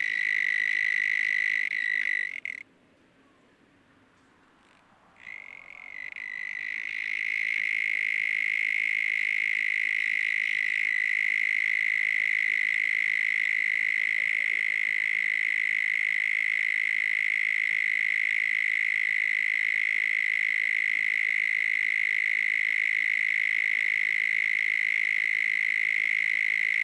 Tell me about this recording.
Loud cicada @ garden in Almada. Recorded with Zoom H6 XY stereo mic.